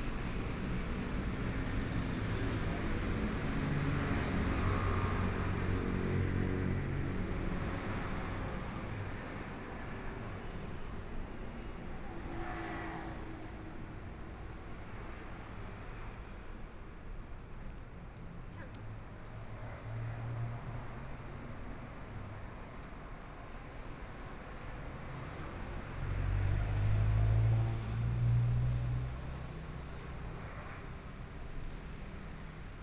聚葉里, Zhongshan District - soundwalk
Walking through the different streets, Traffic Sound, Motorcycle sound, Various shops voices, Binaural recordings, Zoom H4n + Soundman OKM II
Zhongshan District, Taipei City, Taiwan, 6 February 2014, 12:47